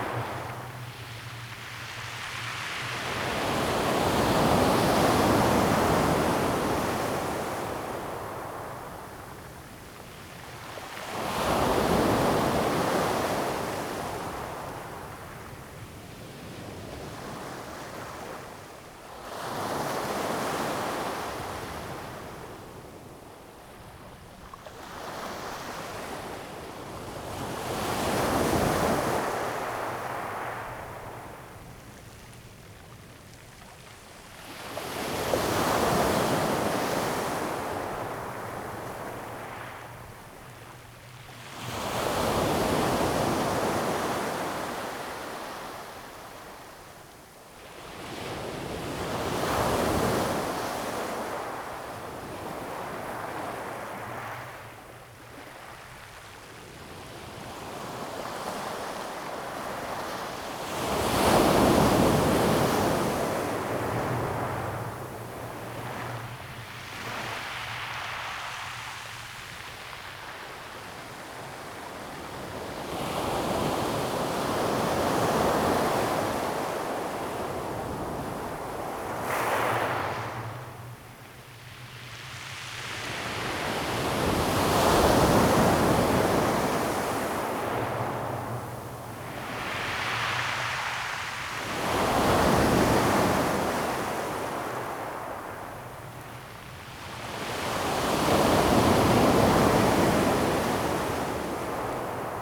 豐原里, Taitung City - Waves
Waves, Very hot weather
Zoom H2n MS+XY